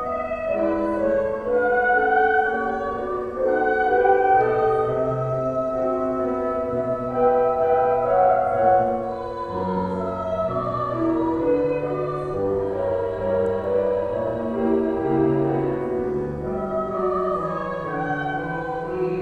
A student practices a vocal piece inside the Muhlenberg College Egner Memorial Chapel.
PA, USA